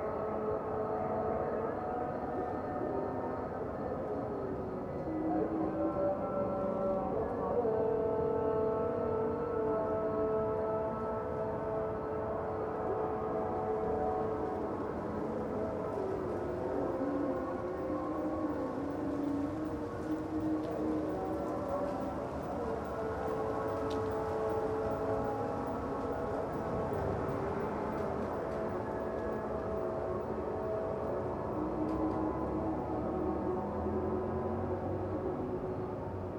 Maadi as Sarayat Al Gharbeyah, Maadi, Al-Qahira, Ägypten - prayers singing ...
recording @ 3:20 in the morning in maadi / cairo egypt -> two neumann km 184 + sounddevice 722
Cairo, Egypt, 1 May